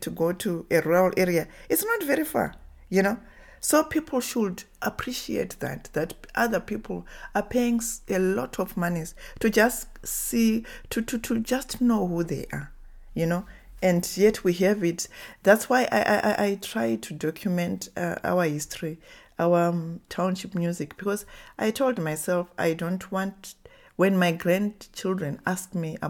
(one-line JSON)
{"title": "Joyce Makwenda's Office, Sentosa, Harare, Zimbabwe - Joyce Makwenda listens to history…", "date": "2012-10-02 11:10:00", "description": "...Towards the end of the interview, she poignantly says, “it’s good we are part of a global culture and what not; but what do we bring to that global village…?”\nFind the complete recording with Joyce Makwenda here:\nJoyce Jenje Makwenda is a writer, filmmaker, researcher, lecturer and women’s rights activist; known for her book, film and TV series “Zimbabwe Township Music”.", "latitude": "-17.79", "longitude": "31.00", "altitude": "1491", "timezone": "Africa/Harare"}